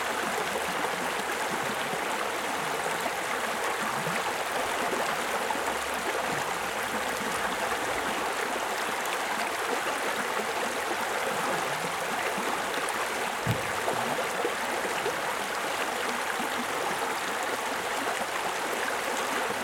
Holmfirth, Holmfirth, West Yorkshire, UK - WLD 2015 River Holme at night
Sitting next to the river for a few minutes to listen to the stream and watch the bats.